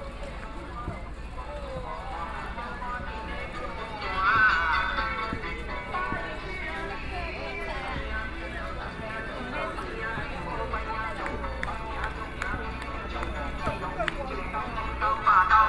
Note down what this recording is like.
Cries of protest, Binaural recordings, Sony PCM D50 + Soundman OKM II